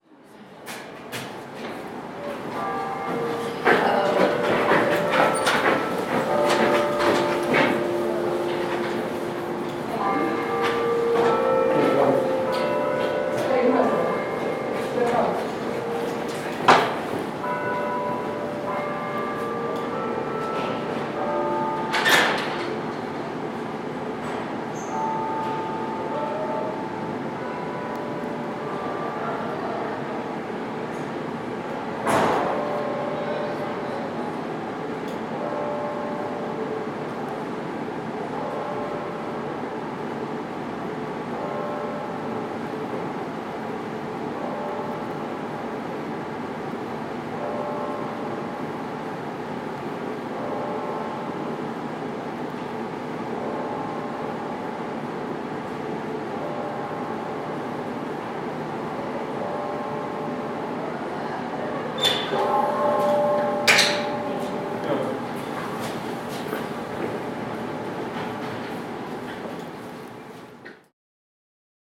Muhlenberg College Hillel, West Chew Street, Allentown, PA, USA - Inside Moyer Hall
Bell tower from inside Moyer Hall